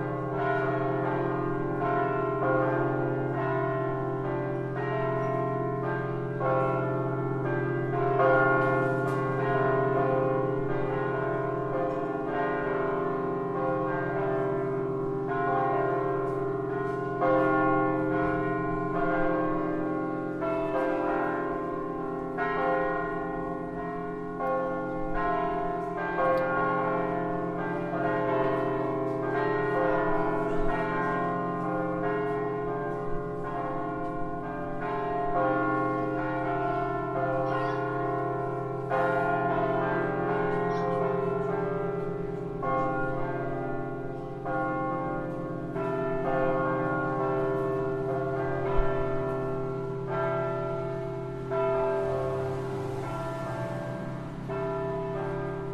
Salengro - Marietton, Lyon, France - Bells of Eglise de l'Annonciation
Sunday, The church bells ringing. I listening by my windows.
10 December 2012